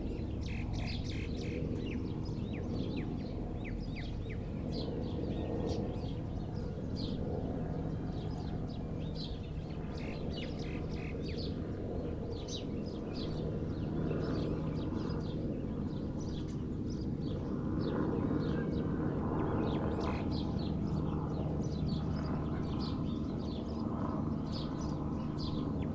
48 HÉLICOPTÈRES ET 16 ULM CE MATIN.
Voir aussi
La Réunion, France, 16 February 2020, 07:34